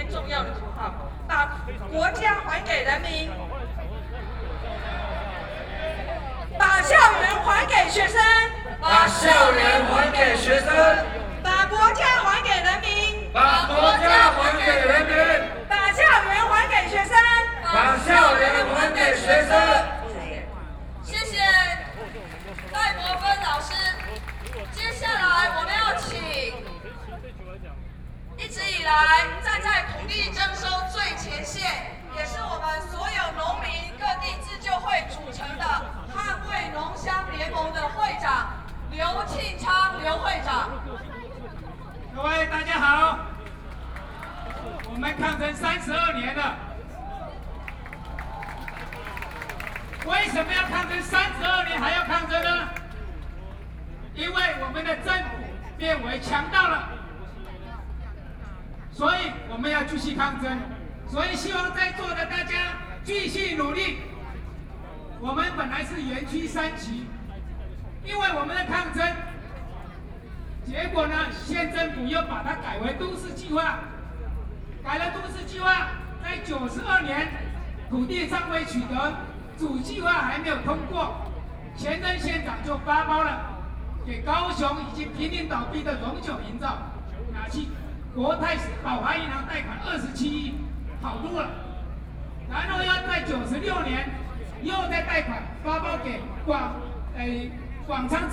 Protest, Self-Help Association of speech, Sony PCM D50 + Soundman OKM II
Taipei City, Taiwan, 18 August